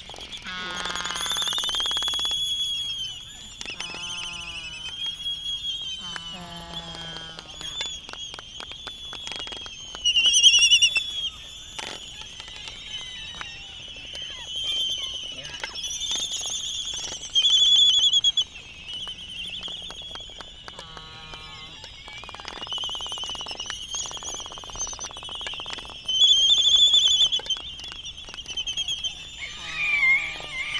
United States Minor Outlying Islands - Laysan albatross dancing ...
Laysan albatross dancing ... Sand Island ... Midway Atoll ... open lavalier on mini tripod ...